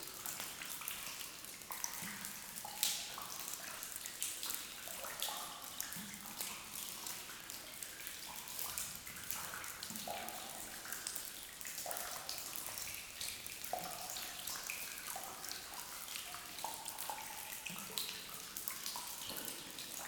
Differdange, Luxembourg - Soft rain
A soft rain in an underground mine. This is a quiet ambience.